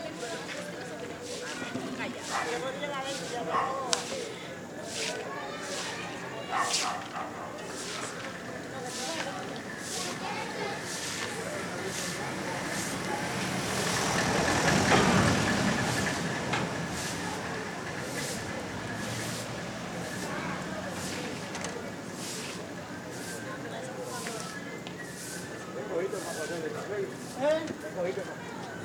Santiago de Cuba, late in the evening, somebody sweeping the street
7 December 2003, 21:46